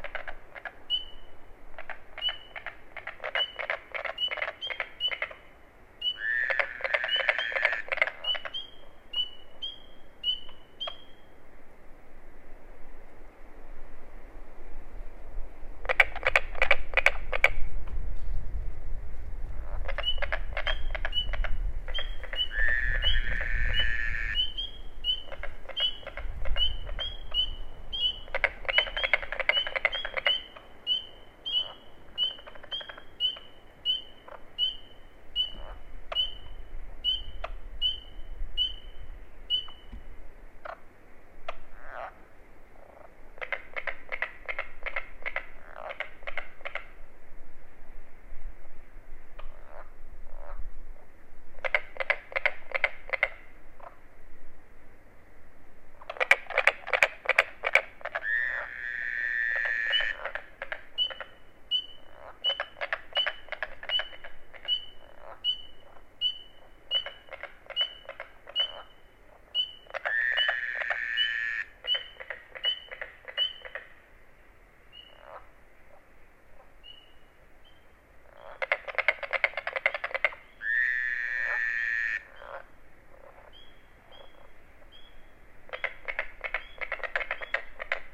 Wharton State Forest, NJ, USA - Bogs of Friendship, Part Two
This was the first field recording I attempted to make. Located in the pine barrens of New Jersey, this series of small ponds was hyperactive with frog activity. The cast of characters include: Pine Barrens Tree Frogs, Spring Peepers, Fowler's Toads, Southern Leopard Frogs, & Carpenter Frogs, and a nice piney wind. Microtrack recorder used with a pair of AT3032 omnidirectional mics.
Chatsworth, NJ, USA, 1 May, 20:00